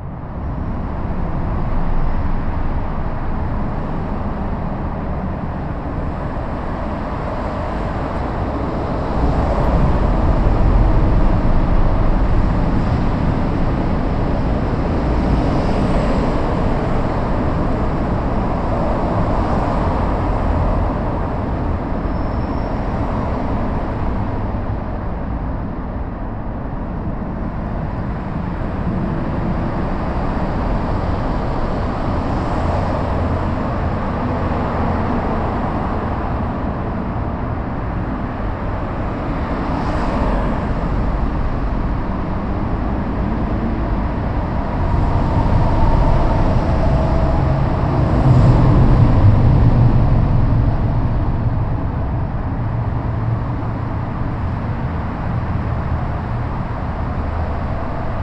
& Dean Keaton, Austin, TX, USA - Storm Drain Under Interstate
Recorded with a pair of DPA 4060s and a Marantz PMD661
11 November 2015, 19:50